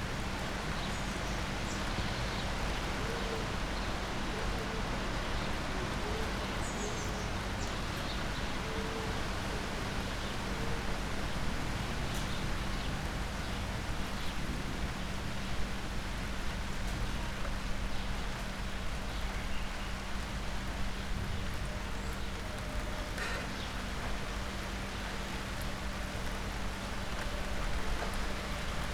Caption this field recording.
it starts to rain after a warm early summer day.